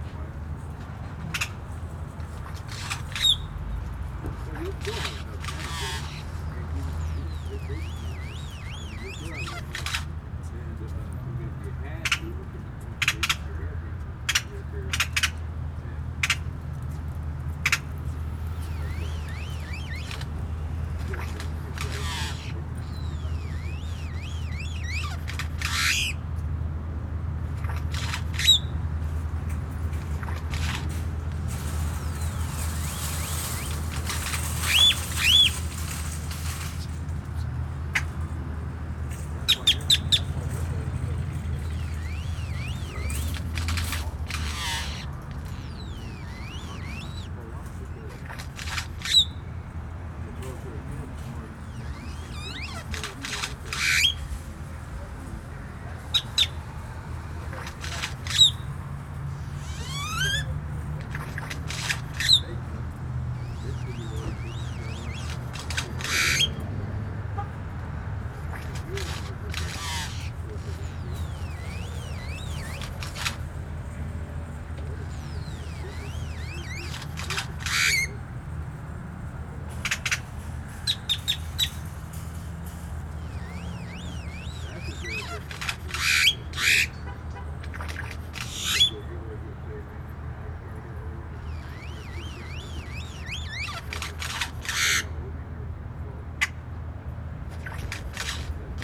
Solitary male Grackle calls, Clear Lake, Houston, TX, USA - Solitary Grackle call
*Binaural* Weird calls from a single male Grackle in a small tree outside a grocery store. Traffic sounds, store employees smoking and talking.
CA-14 omnis > DR100 MK2